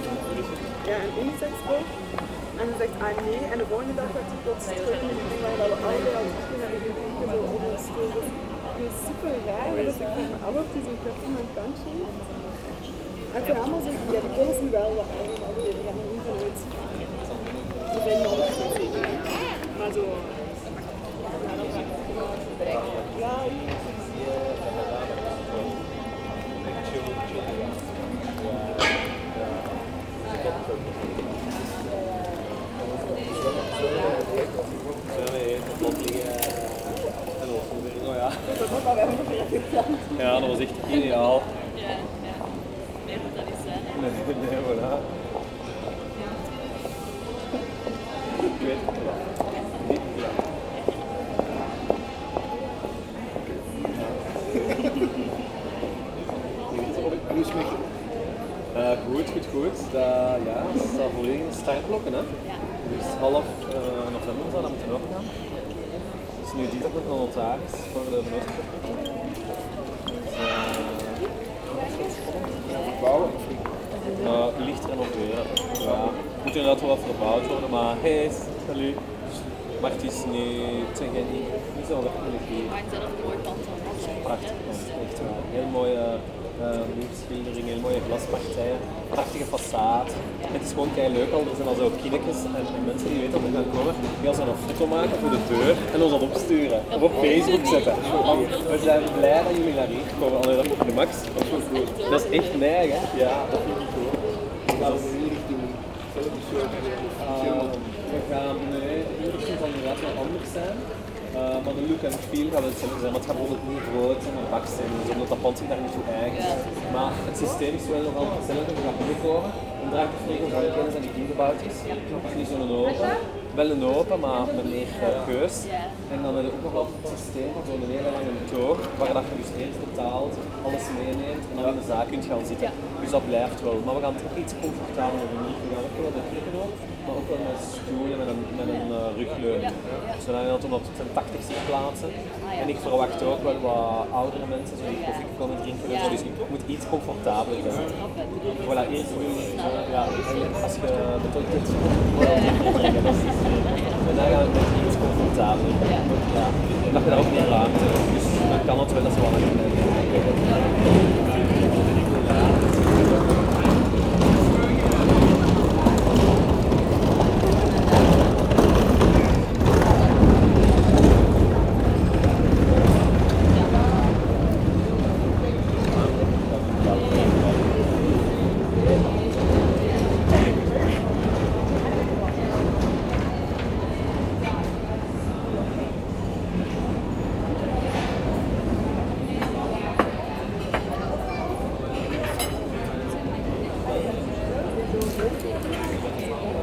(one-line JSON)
{"title": "Leuven, Belgique - Bar terraces", "date": "2018-10-13 11:05:00", "description": "A sunny day in autumn. People discussing at the bar terraces, bicycles and pedestrians.", "latitude": "50.88", "longitude": "4.70", "altitude": "34", "timezone": "Europe/Brussels"}